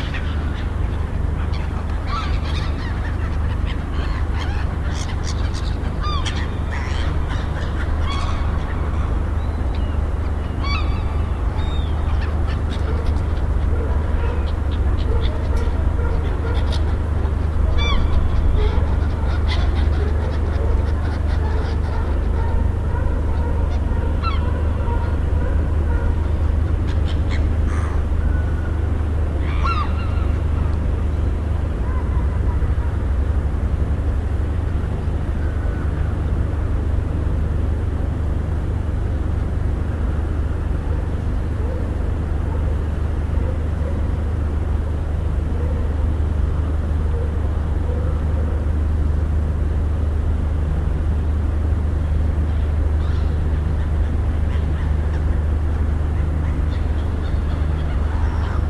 BsM Port seals seagulls - Boulogne-sur-Mer Port seals seagulls
Boulogne sur Mer, port, quiet evening scene with seals barking at the Nausicaa sealife centre across the basin, a few diesel generators running on the fishing boats and the usual noise of the seagulls. Zoom H2.